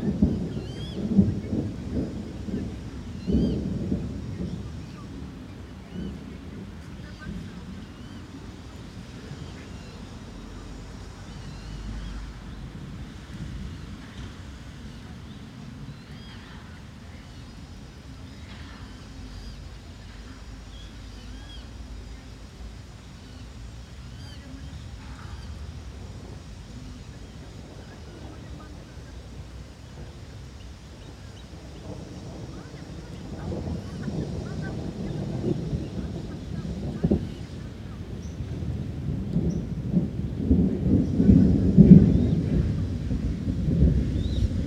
{"title": "Boriso Nemcovo skveras, Žvėryno sen, Vilnius, Lietuva - Before thunderstorm", "date": "2021-06-11 14:00:00", "description": "The two ponds are separated by a bridge and there are several trees nearby. The ponds are surrounded by residential houses on all sides, a street on one side and a meadow on the other. The meadow is covered with individual deciduous trees.\nAt the time of recording it was raining lightly, with light winds, thundering at intervals of ~1-1'30min.\nWaterfowl with chicks - pochards, mallards, crows, pigeons. Occasional sounds of passing cars, people talking could be heard.", "latitude": "54.69", "longitude": "25.24", "altitude": "103", "timezone": "Europe/Vilnius"}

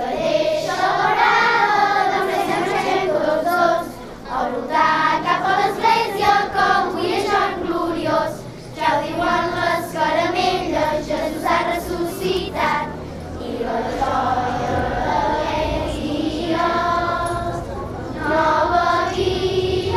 {"title": "Manlleu, España - Caramelles", "date": "2012-04-17 11:28:00", "description": "Les caramelles són unes cançons i balls populars que es canten per Pasqua o Pasqua Florida. Les caramelles tenien, tradicionalment, temàtica religiosa: cantaven la joia de la resurrecció de Crist, però en els últims temps han incorporat cançons de to festiu i, també, d'aire satíric sobre qüestions locals. Flix, La Seu d'Urgell, Sant Julià de Vilatorta, Súria, Callús, Mataró i Cardona, són les poblacions amb més tradició. A Sant Julià de Vilatorta els caramellaires canten els Goigs del Roser, i porten una vestimenta de gala pròpia de l'antiga ruralia catalana: barret de copalta, capa negra amb valona sobreposada, bordó, i llaç català de color morat. A Súria s'hi celebra l'aplec de Caramelles més nombrós, que reuneix vuit colles i mig miler de cantaires.", "latitude": "42.00", "longitude": "2.28", "altitude": "459", "timezone": "Europe/Madrid"}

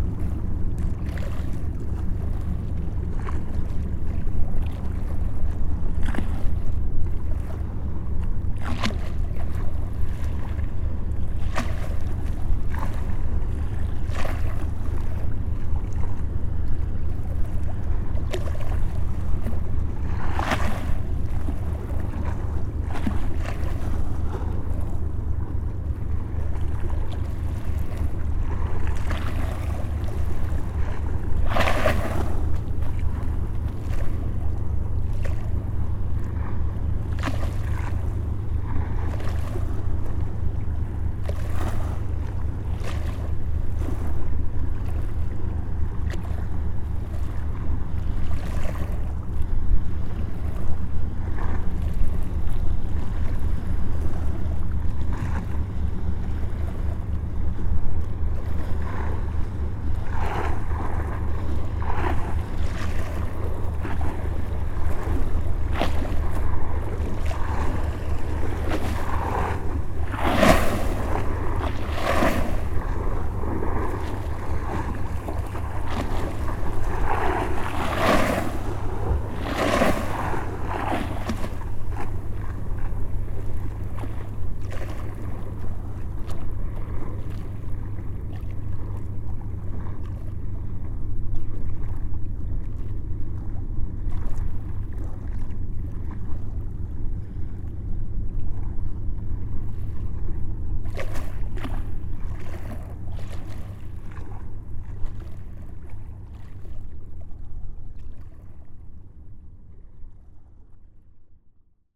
{"title": "Fatouville-Grestain, France - Aurilia boat", "date": "2016-07-21 12:10:00", "description": "An enormous boat (a supertanker called Aurila), is passing by on the Seine river. It comes from Liberia.", "latitude": "49.43", "longitude": "0.32", "timezone": "Europe/Paris"}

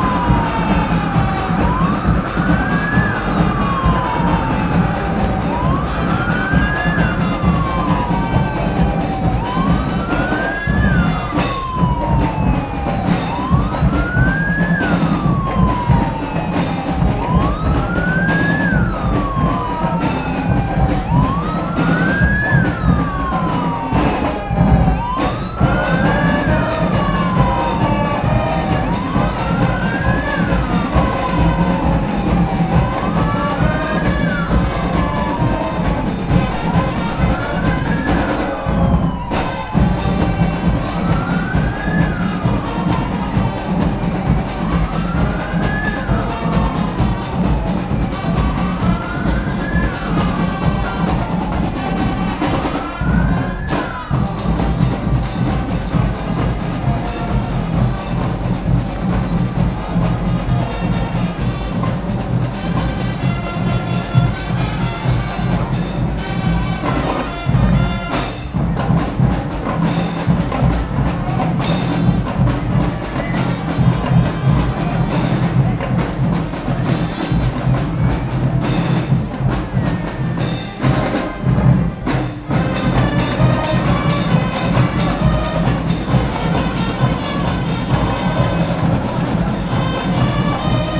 {"title": "Av. Santo Antônio dos Navegantes - Lot. Mandaguari, Itaparica - BA, 44460-000, Brasilien - Itaparica Parade", "date": "2017-10-25 14:15:00", "description": "Parade on the island with marching bands. Recorded with binaural Soundman mics and Sony PCM-D100.", "latitude": "-12.89", "longitude": "-38.68", "altitude": "28", "timezone": "America/Bahia"}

